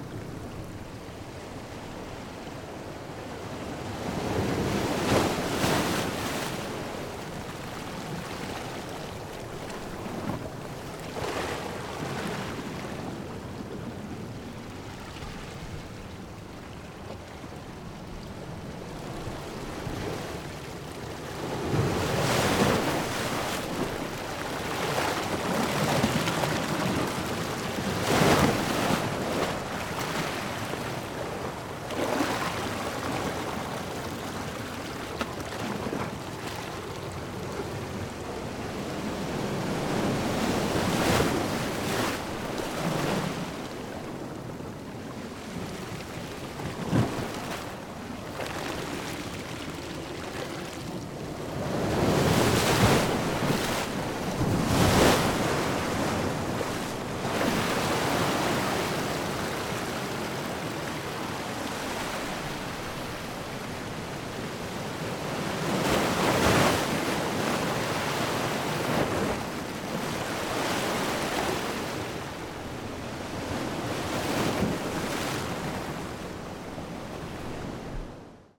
This is a recording of a beach near to Loncoyén. Mics are pointed towards rocks, focused on waves splashes. I used Sennheiser MS microphones (MKH8050 MKH30) and a Sound Devices 633.
17 August 2022, 18:30